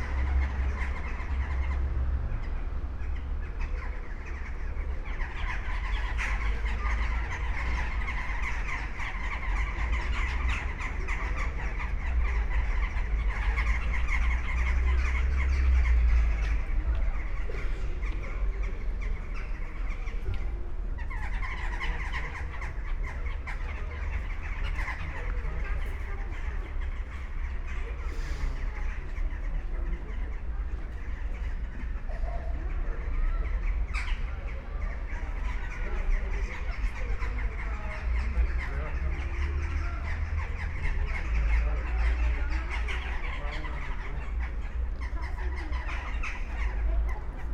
trees around national library, NUK, ljubljana - at dusk
birds in tree crowns at the time of sun dispersing into electric lights, passers by, bicycles, buses, steps, instruments from behind windows, microphones wires ...
University of Ljubljana, Ljubljana, Slovenia, January 2014